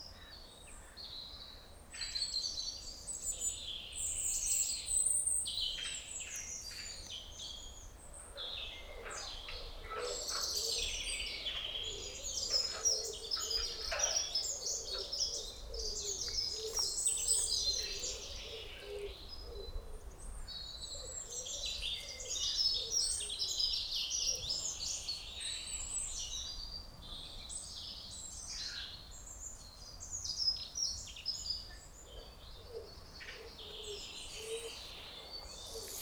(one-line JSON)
{"title": "Court-St.-Étienne, Belgique - Rural landscape", "date": "2016-03-15 12:45:00", "description": "In a rural landscape, a person is working in a garden, quite far, and birds sing in the forest.", "latitude": "50.65", "longitude": "4.52", "altitude": "98", "timezone": "Europe/Brussels"}